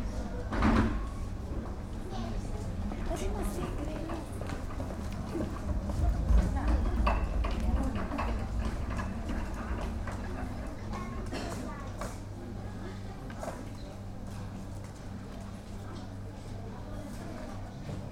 Nova Gorica, Slovenija, Bevkova Knjižnica - Knjižnični Ambient